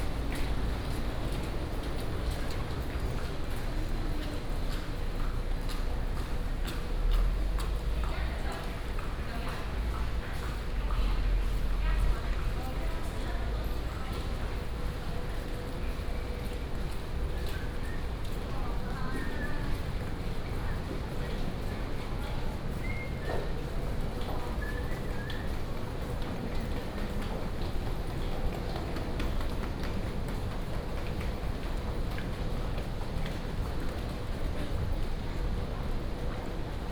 Taoyuan Station, Taoyuan City - Walk to the train station lobby
Walk to the train station lobby, Traffic sound, footsteps
Taoyuan City, Taiwan, 12 October, 18:01